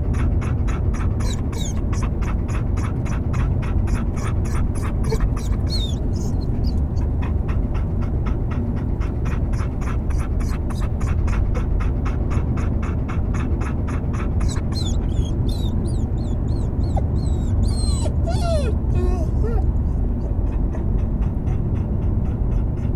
Filey, UK - the dog goes to the beach ...
The dog goes to the beach ... very occasionally we have a dog we take to the beach ... it's a rarity for her ... she gets excited and whimpers ... whines ... trills ... chirrups etc ... the whole way in the back of the car ... recorded with Olympus LS 11 integral mics ...